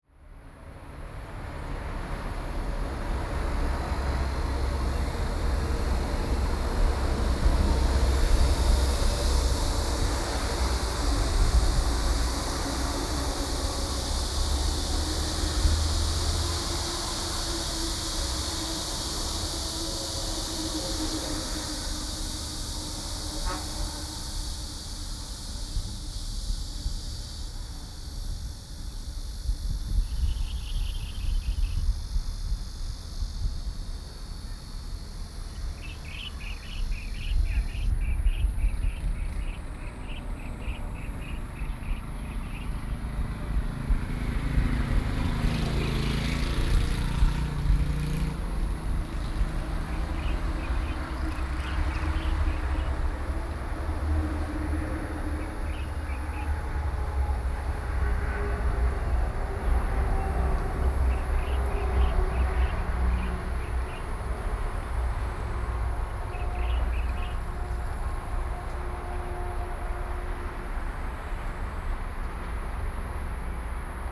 八里, Bali Dist., 新北市 - Traffic Sound
Traffic Sound, Cicadas cry, Bird calls
Sony PCM D50
New Taipei City, Taiwan